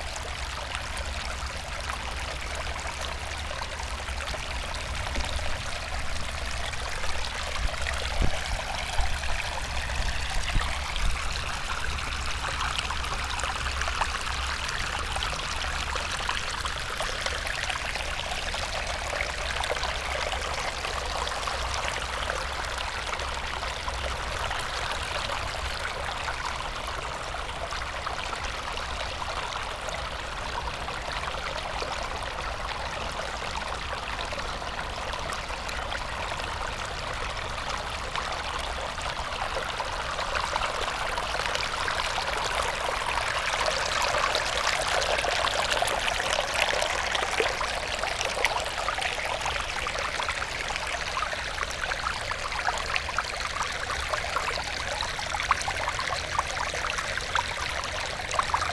old river bed, drava, melje, maribor - still life poem, flux mood
fragment from a reading session, poem Tihožitje (Still life) by Danilo Kiš